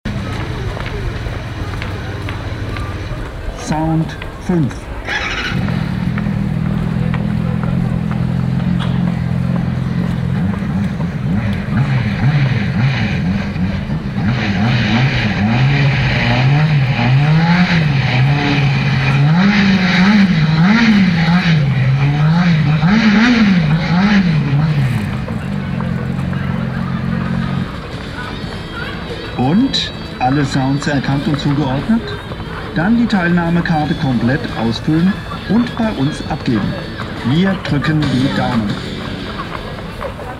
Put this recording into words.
klangrätsel eines motorradgeschäfts - und sound erkannt ? soundmap nrw: topographic field recordings, social ambiences